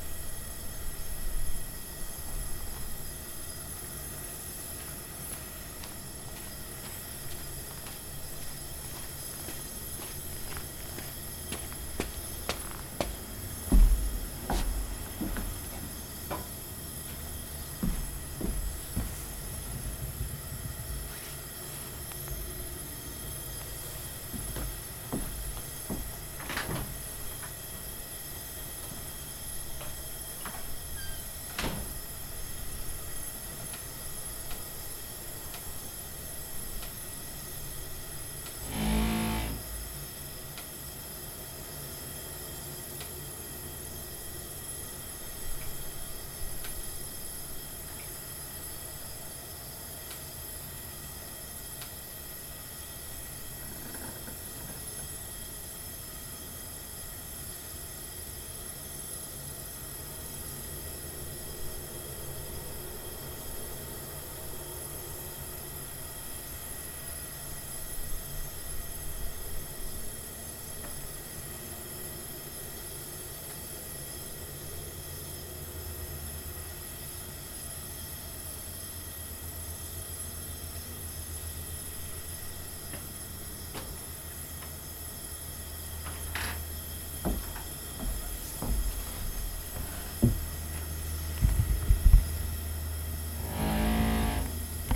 E Prentiss St, Iowa City, IA, USA - Midnight Walkers of the Quiet East Side
This recording was set on a front porch of a house in Iowa City on the queiter part of town at midnight. It captures some late-night people out and about in the city of Iowa City.